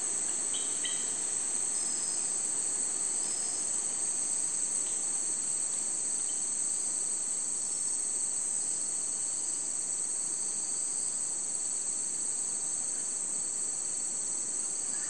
Peten, Guatemala - Rainforest by night